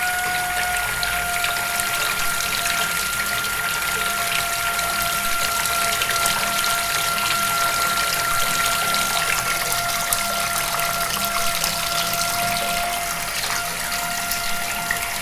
Moaning in the bathroom, Smíchov
People have nightmares sometimes. For example dreaming about a stranger, who tries to get into their apartment the whole night. Then you don know if it was a bad dream or reality. If you\ll find old abandoned photographs in the frames in the street, you will take them and hang them up on the wall. Then you can be sure, that you will get the dreams of new visitors, whose portraits are hanging on the wall. Or you will dream about their death. Ive put on such photographs on the wall and somebody is now moaning in the bathroom.